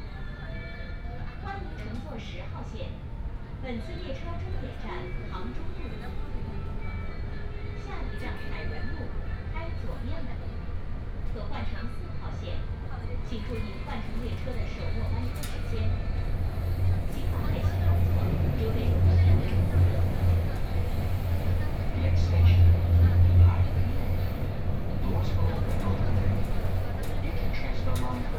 Hongkou District, Shanghai - Line 10 (Shanghai Metro)
from Siping Road Station to Tiantong Road Station, Binaural recording, Zoom H6+ Soundman OKM II
Shanghai, China, 28 November 2013, ~13:00